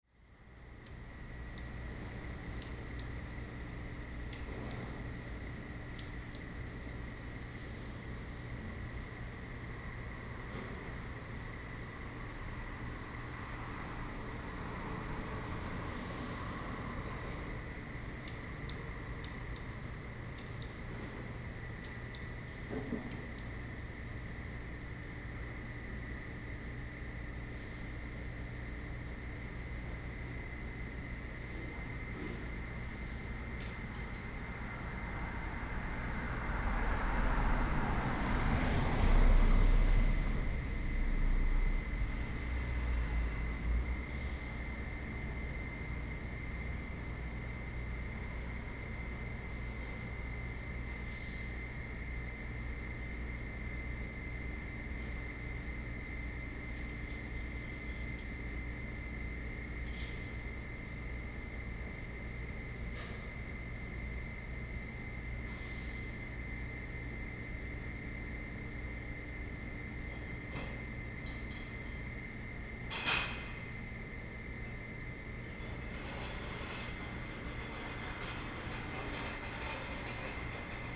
Late night on the street, Traffic sound, Seafood Restaurant
23 April, ~02:00